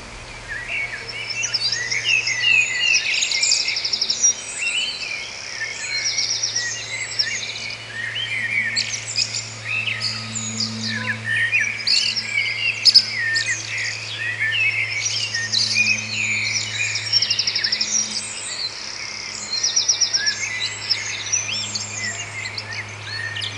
Grauwe Broedersstraat, Diksmuide, Belgium - Birds In The Fields
Recorded onto a Marantz PMD661 with a stereo pair of DPA 4060s